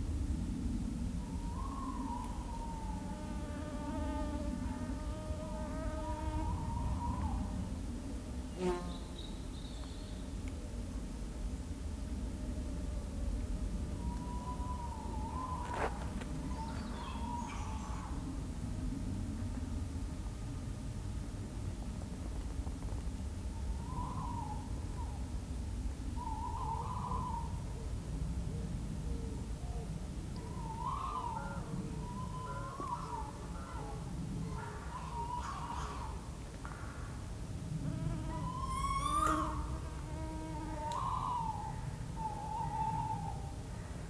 Fen Covert, Halesworth, UK - Tawny owl in the darkening wood
Walking back at dusk through the marshy, spooky wood, I move quickly hoping to outrun the mosquitoes. Planes are always overhead and crows crow in the tree tops. Suddenly I hear an owl and stop. The call gets closer and all at once a dark shape flies across my path and zigzags away into the darkness between the trees. Maybe it was a trick of the fading light but it was much bigger than I expected. Western Europe is losing its bird populations fast. For future listening I want to be able to hear them still.
18 July 2018, ~21:00